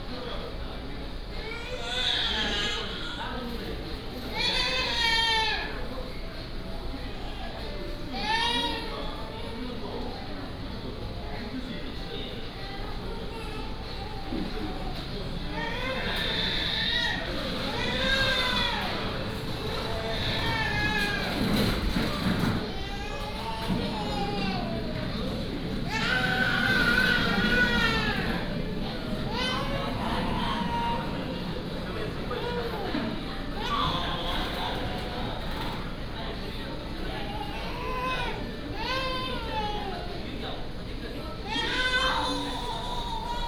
Home Plus, Gimhae-si - Crying children
At the mall, Crying children